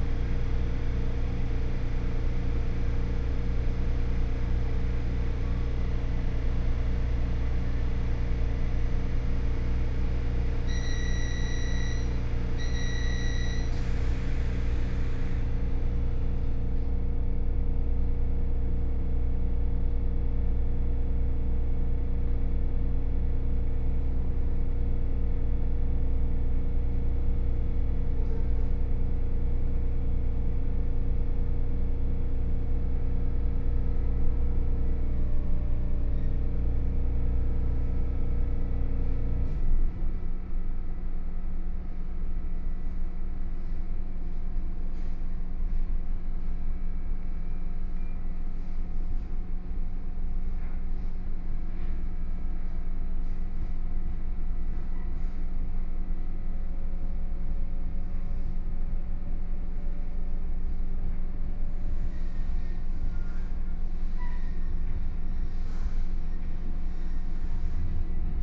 Su'ao Township, Yilan County - Yilan Line
Local Train, from Xinma Station to Su'ao Station, Binaural recordings, Zoom H4n+ Soundman OKM II
Yilan County, Taiwan, 7 November